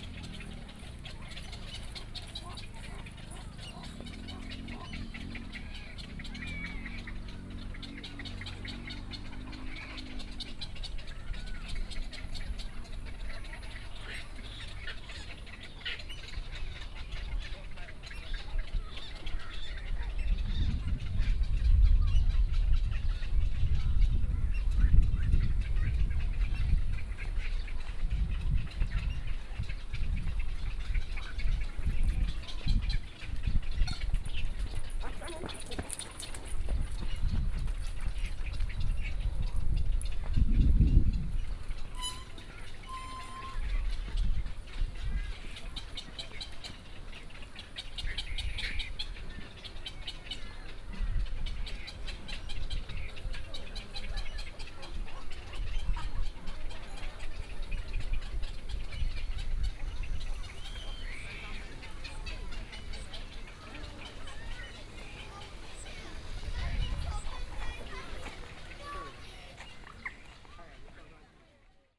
梅花湖, Dongshan Township - Birds and Duck
Tourist, Tourist Scenic Area, At the lake, Birdsong, Duck calls, White egrets
Sony PCM D50+ Soundman OKM II
27 July 2014, 11:55